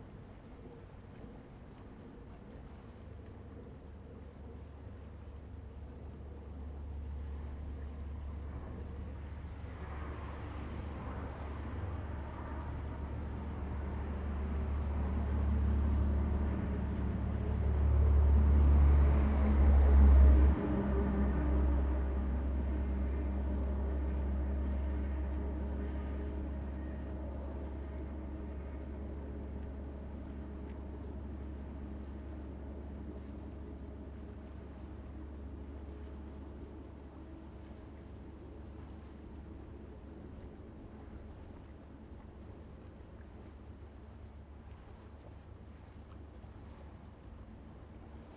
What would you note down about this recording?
in the Bridge, Traffic Sound, Zoom H2n MS+XY